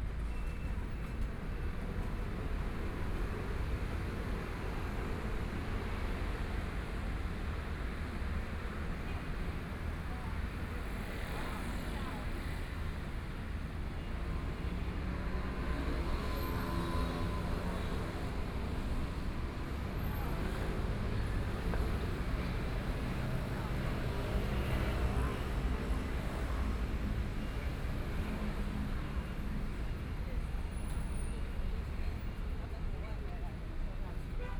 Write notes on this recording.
At the intersection, Traffic Sound, Binaural recordings, Zoom H4n+ Soundman OKM II